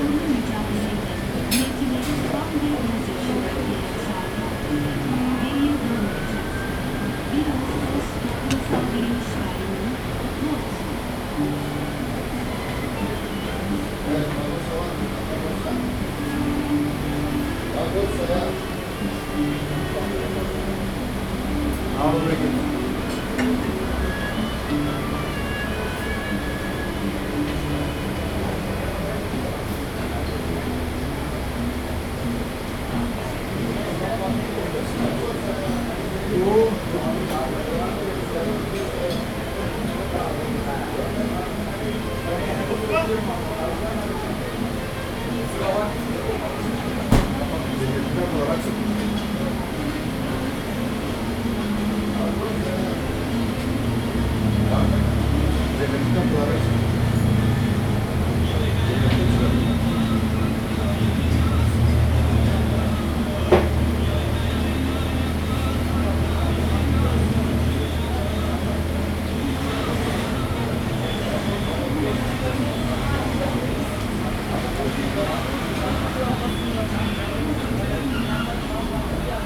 Old Bazar in Girne - from inside
Interieur of the Old Bazar, almost empty
Girne - Κερύνεια, Kuzey Kıbrıs, Κύπρος - Kıbrıs, 1 August 2017